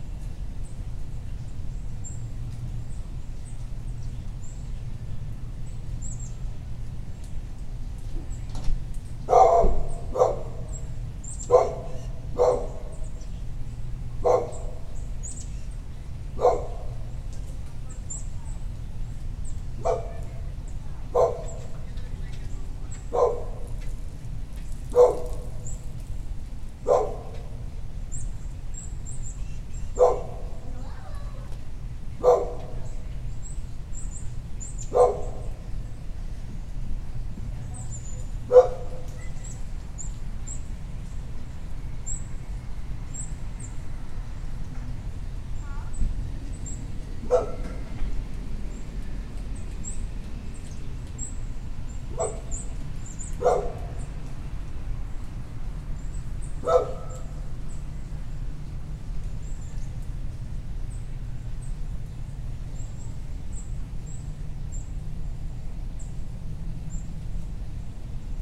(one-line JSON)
{"title": "Glendale Ln, Beaufort, SC, USA - Neighborhood Ambiance", "date": "2021-12-24 11:20:00", "description": "A recording taken on the doorstep of a house. Many birds are heard throughout the recording. A neighbor's dog begins to bark at 05:30. Human activity is heard throughout the neighborhood, including vehicles and people talking.\n[Tascam DR-100mkiii & Primo EM-272 omni mics]", "latitude": "32.41", "longitude": "-80.70", "altitude": "13", "timezone": "America/New_York"}